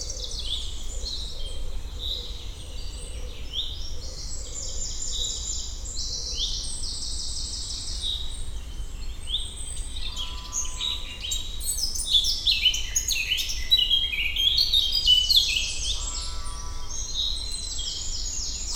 Eurasian Blackcap solo. Common Chiffchaff announcing bad weather (the repetitive toui ? toui ? toui ?)